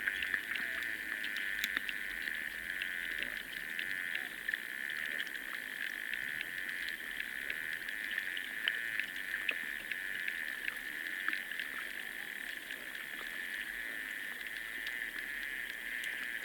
Dusetos, Lithuania, Sartai lake hydrophone
Hydrophone in the water near the shore of Sartai lake. In the begining it even catches the sound of some grass cutter machine nearby....